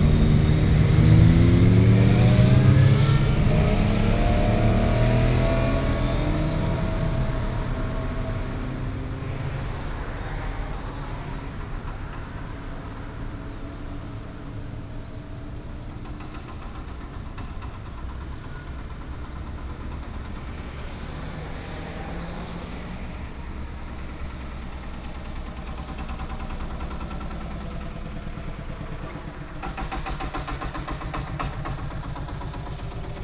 Beside the road, Heavy motorcycle lover, Traffic sound
Binaural recordings, Sony PCM D100+ Soundman OKM II
Dahu Township, 中原路6-6號, 24 September 2017